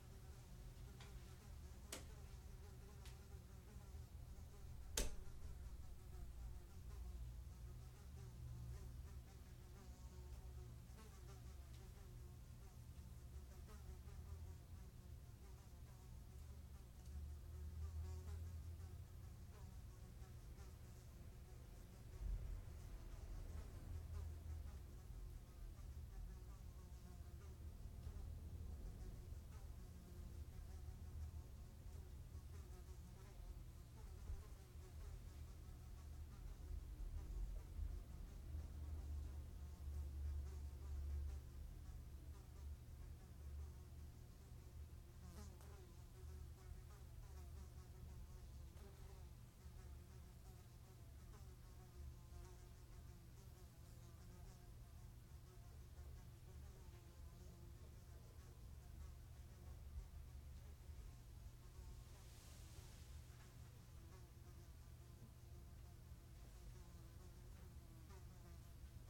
mosquito - fly - mosquito
mosquito voando de noite dentro de casa. Fly at home. Night.